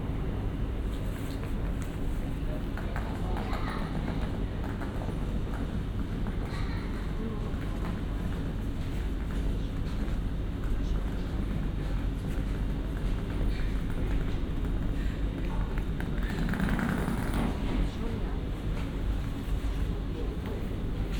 waiting for the train after a night in Erlangen... next stop Berlin...